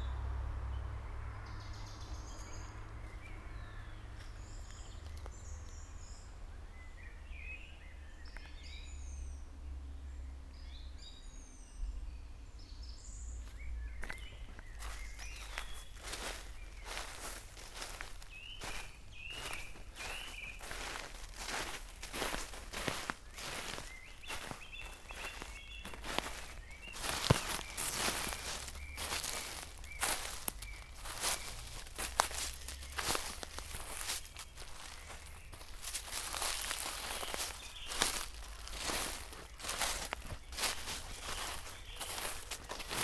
bergisch gladbach, sand, gang durch waldlaub
soundmap: bergisch gladbach/ nrw
kleines waldstück in sand, tiefes laub und lehmboden, dichtes laubblätterdach, zahlreiche vogelstimmen im blattdachecho, nachmittags
project: social ambiences/ listen to the people - in & outdoor nearfield recordings
sand, kleines waldstück